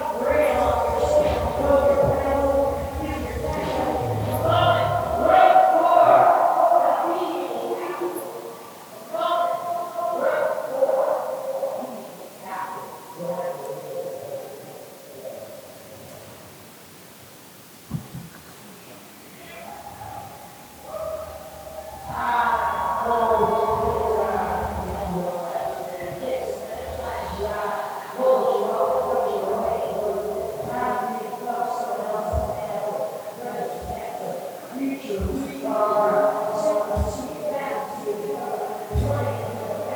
The Funny Farm, Meaford, ON, Canada - Lydia Lunch
An excerpt from Lydia Lunch's closing set at Electric Eclectics Festival, as heard from a tent about 200m from the stage, with a 1-second echo off the hills nearby. Zoom H2n with post EQ + volume tweaks.